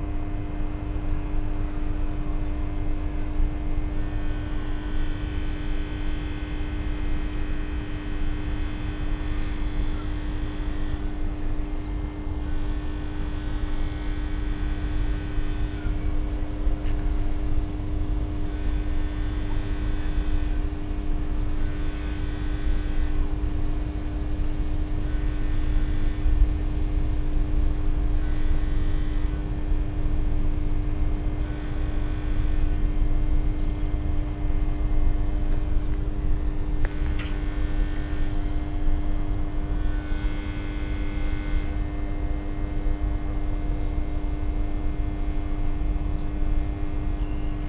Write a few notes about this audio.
Transformer drone with trams, trains, birds and distant thunder. Soundfield mic, stereo decode